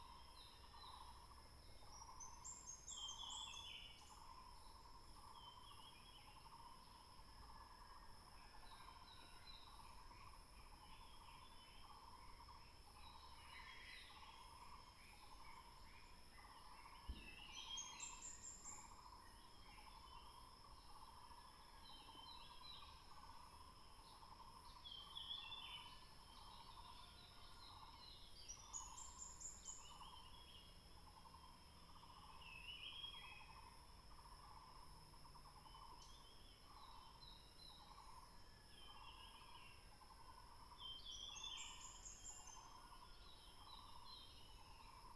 華龍巷, Yuchi Township, Nantou County - In the woods
Birds singing, in the woods